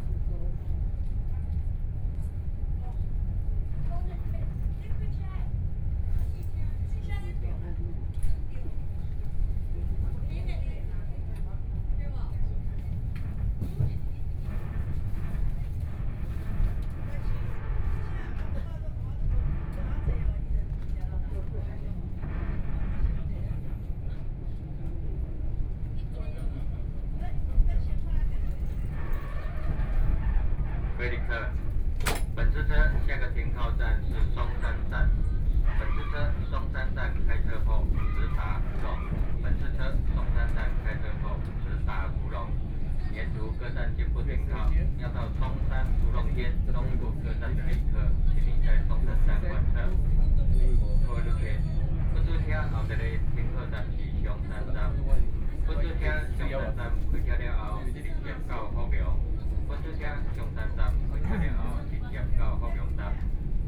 Xinyi District, Taipei - Chu-Kuang Express
from Taipei Station to Songshan Station, Train broadcast messages, Binaural recordings, Zoom H4n+ Soundman OKM II